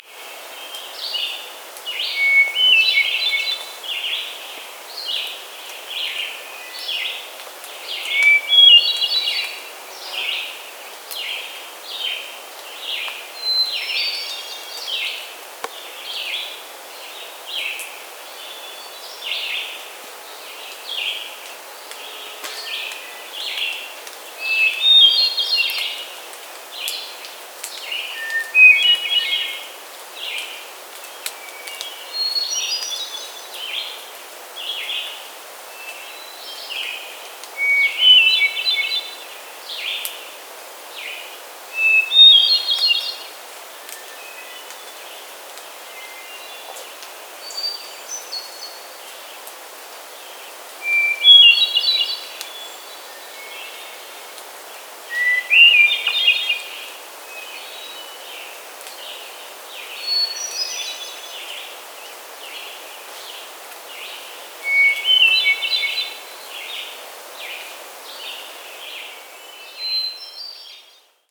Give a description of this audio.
Hermit thrush evening songs. Zoom H2n with EQ and levels postprocessing.